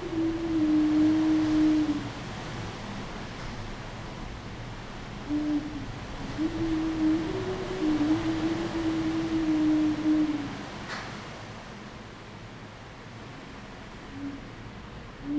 Rende 2nd Rd., Bade Dist., Taoyuan City - wind
The wind, typhoon
Zoom H2n MS+XY
Taoyuan City, Taiwan